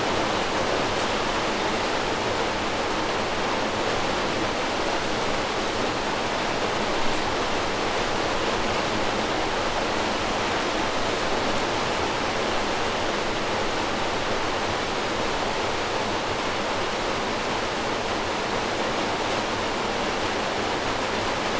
Great Lingy Hut Bothy - Sheltering from the rain
After two soggy days hiking, this shelter was so welcome.
Recorded on LOM Mikro USI's and Sony PCM-A10.
North West England, England, United Kingdom, 7 September 2020